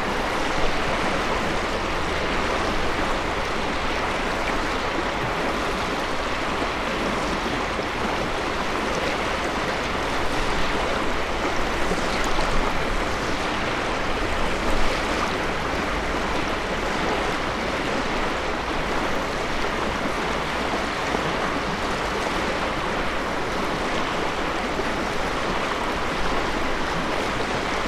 Drava river, Slovenia - flux
from afar - powerful river flow
Starše, Slovenia, 7 October 2012